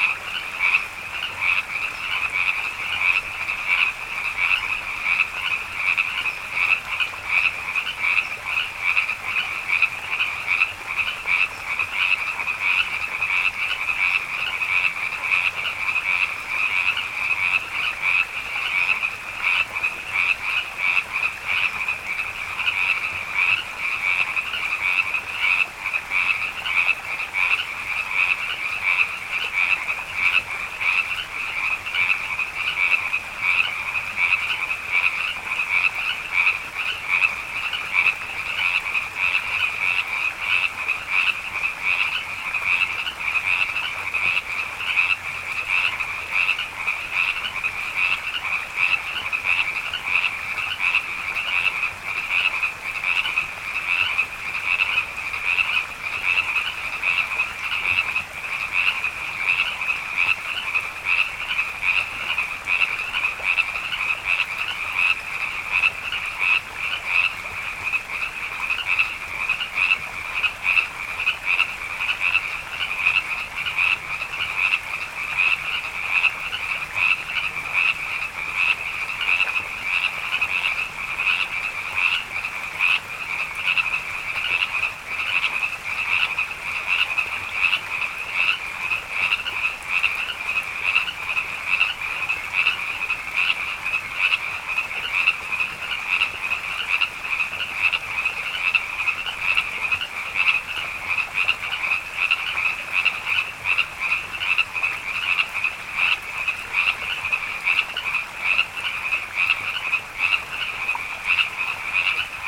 Nichols Canyon Rd, Los Angeles, CA, USA - Frogs in Spring
Quiet evening in the Hollywood Hills... aside from the frogs. Tried a few different mic techniques. Binaural turned out the best.